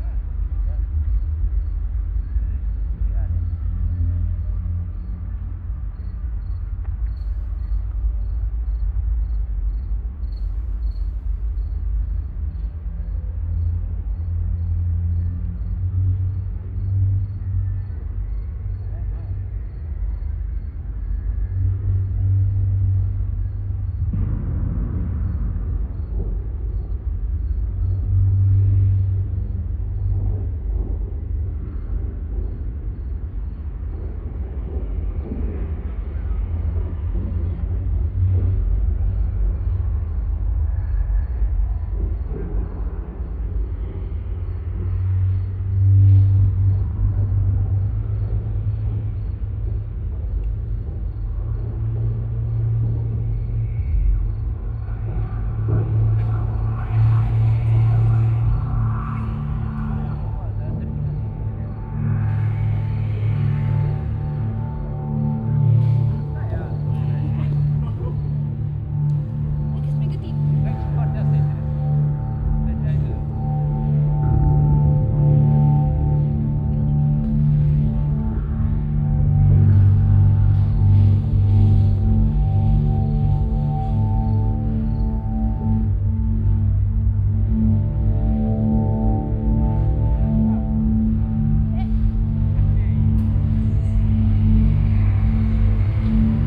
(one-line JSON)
{"title": "Cetatuia Park, Klausenburg, Rumänien - Cluj, Cetatuia, Fortess Hill project, rocket lift off", "date": "2014-05-25 23:00:00", "description": "At the monument of Cetatuia. A recording of the multi channel night - lift off composition of the temporary sound and light installation project Fortress Hill. phase 1 - awakening of the mountain - phase 2 - shepard spiral scale - phase 3 - rocket lift off - phase 4 - going into space - phase 5 - listening through the spheres (excerpt) - total duration: 60 min.\nNote the roof of the monument rattling and resonating with the sound waves.\n- headphone listening recommeded.\nSoundmap Fortress Hill//: Cetatuia - topographic field recordings, sound art installations and social ambiences", "latitude": "46.77", "longitude": "23.58", "altitude": "396", "timezone": "Europe/Bucharest"}